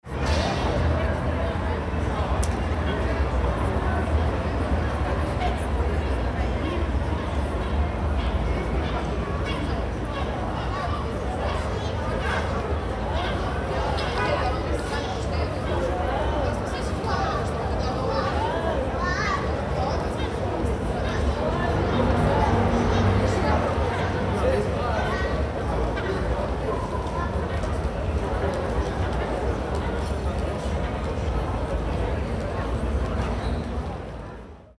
Radio Rijeka, Rijeka, balcony
City sounds @ summer time. recorded from balcony of Radio Rijeka (5m above street level).
8 July, 22:40